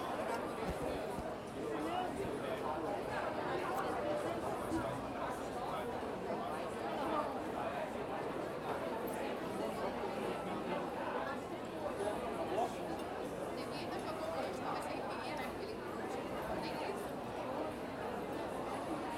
Av. Paulista - Cerqueira César, São Paulo - SP, 01310-928, Brasil - praça de alimentação - Shopping Center 3
#food #people #alimentacao #sp #saopaulo #brazil #br #consolacao #avenida #paulista #voices